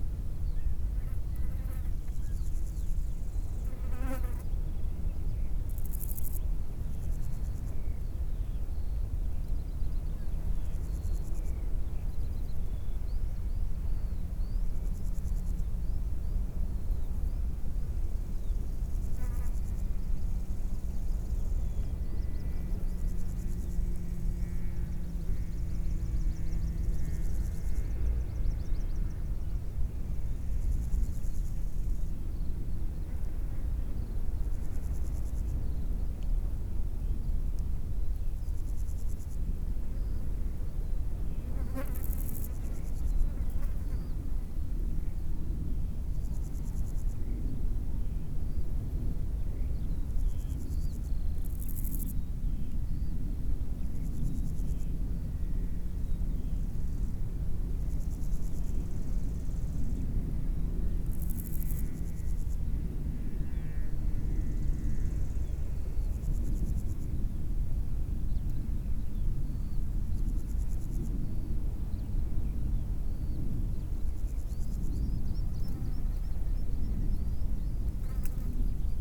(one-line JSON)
{"title": "Alprech creek", "date": "2009-07-18 15:00:00", "description": "Summer afternoon on a promontory next to the seashore, with crickets, birds, a large plane up high and a toy plane near.", "latitude": "50.69", "longitude": "1.56", "altitude": "17", "timezone": "Europe/Paris"}